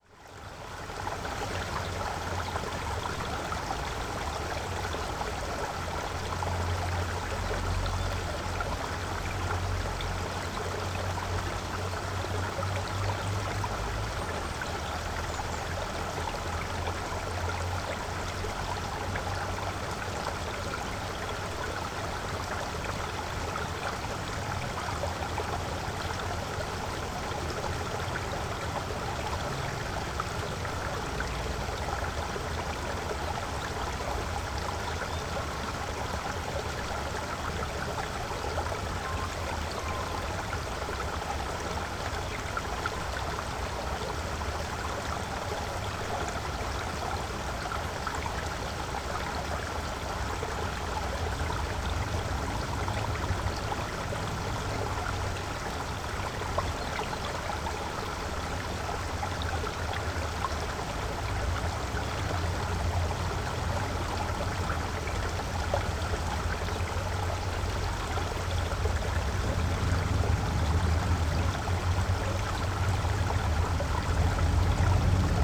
burg/wupper, mühlendamm: bach - the city, the country & me: creek
the city, the country & me: october 15, 2011
Solingen, Germany